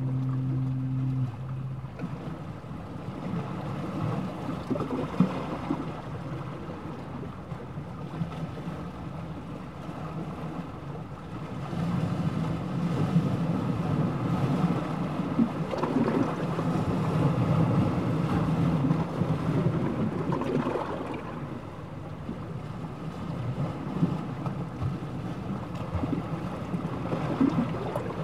Samphire Hoe, Kent, UK - Sea bubbles
This recording was taken when the tide was out, so the light patch you can see in the image was a big pile of chalky white rock, built up (I think) to break the waves and slow down the erosion of the cliffs. For this recording the mics were placed within the pile of rocks, near the surface of the water. Because of the weird spaces in between the rocks it gives the sound a strange 'trapped' quality, mutes the sound of the sea and waves, and the loudest sounds are the bubbles and gurgles as the sea tries to find a way between the rocks.
It was a bright day on land but foggy over the sea, so you can hear a fog horn sounding at intervals of just over a minute.
(zoom H4n internal mics)